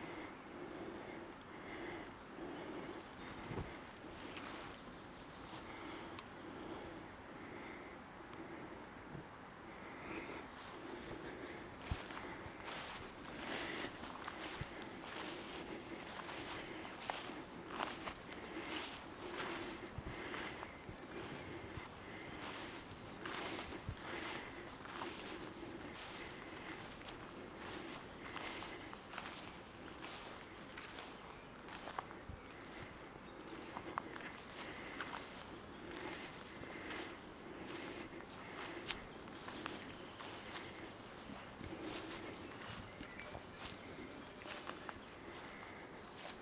Via Maestra, Rorà TO, Italia - Rorà Soundwalk-220625
Duration: 39'45"
As the binaural recording is suggested headphones listening.
Both paths are associated with synchronized GPS track recorded in the (kmz, kml, gpx) files downloadable here:
Piemonte, Italia